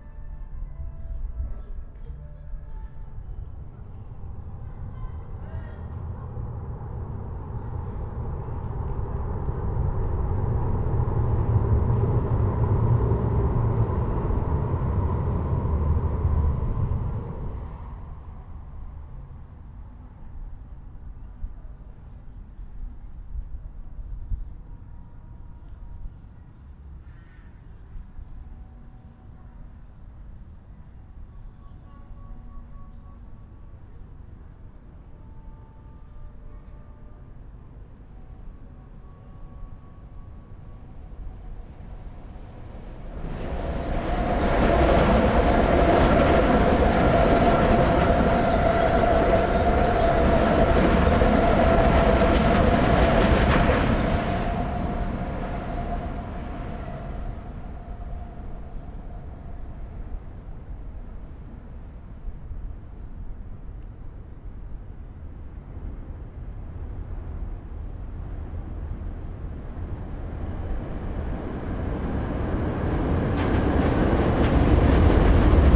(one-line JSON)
{"title": "Handelskai, Wien, Austria - Under the bridge near Handelskai", "date": "2020-08-15 20:30:00", "description": "Train are passing by. In the same time a concert is taking place near Floridsdorf bridge.", "latitude": "48.24", "longitude": "16.39", "altitude": "154", "timezone": "Europe/Vienna"}